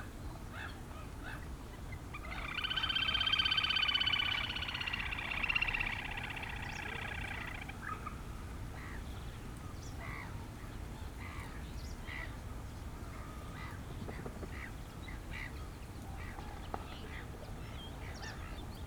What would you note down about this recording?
place revisited on a Sunday afternoon in spring, too much wind. (Sony PCM D50, DPA4060)